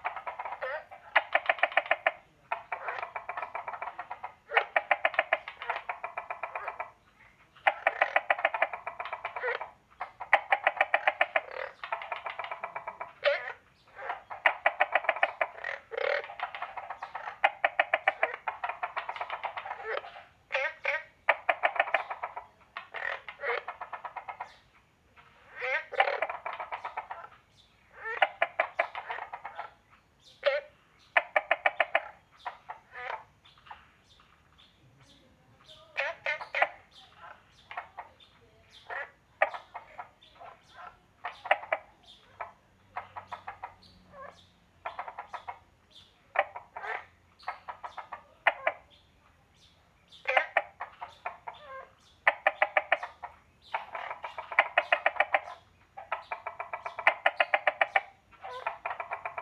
紅瓦厝山居民宿, Puli Township - Small ecological pool
Frogs chirping, Small ecological pool
Zoom H2n MS+ XY